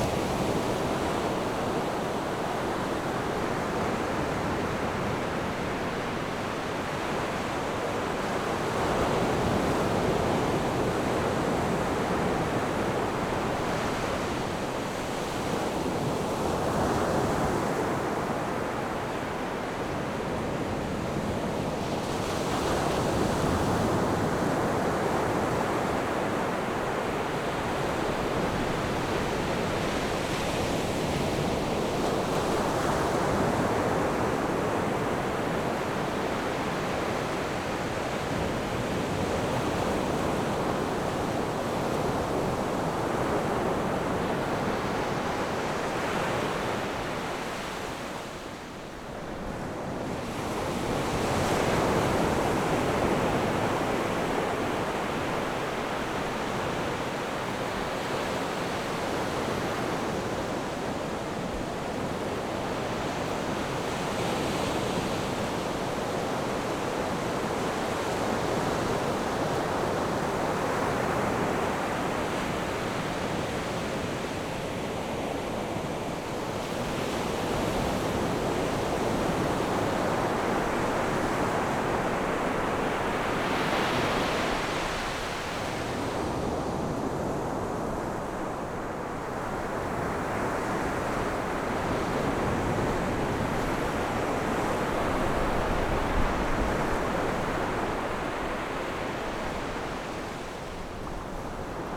At the beach, Sound of the waves
Zoom H6 +RodeNT4
15 October, ~12:00